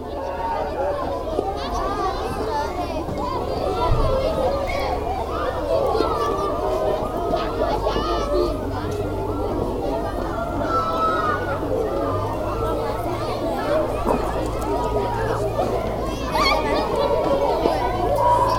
The Sart school, children are playing all around.